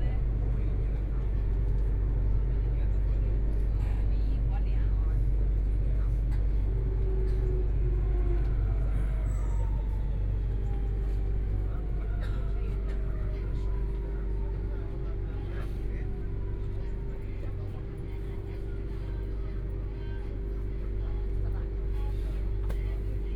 Huangpu District, Shanghai - Line2 (Shanghai Metro)
Line2 (Shanghai Metro), from East Nanjing Road station to Dongchang Road station, Binaural recording, Zoom H6+ Soundman OKM II